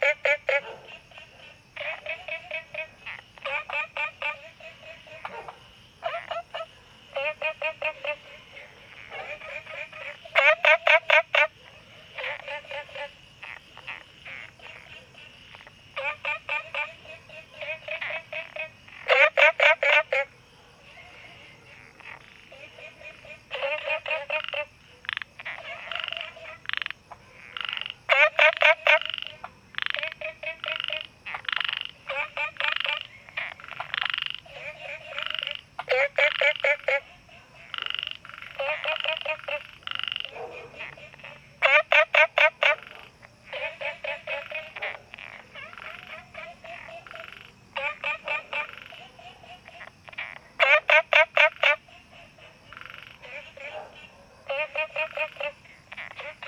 青蛙ㄚ婆ㄟ家, Puli Township, Nantou County - A variety of frog sounds
A variety of frog sounds
Zoom H2n MS+XY
Puli Township, 桃米巷11-3號